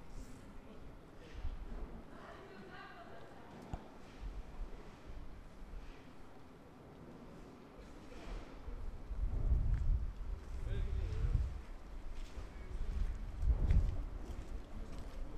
Śródmieście, Danzig, Polen - Ulica Chlebnicka
Renovation work in Gdansk's old city centre: Sliding down rubble through a huge plastic tube from the 5th floor.
Gdańsk, Poland, 28 September 2013, 14:20